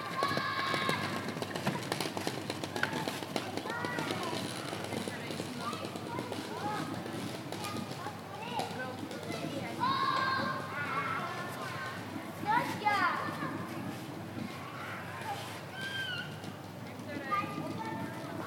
Nida, Lithuania - Between two playgrounds
Recordist: Tornike Thutsishvili
Description: The recorder was placed between to playgrounds. Children playing and screaming. Recorded with ZOOM H2N Handy Recorder.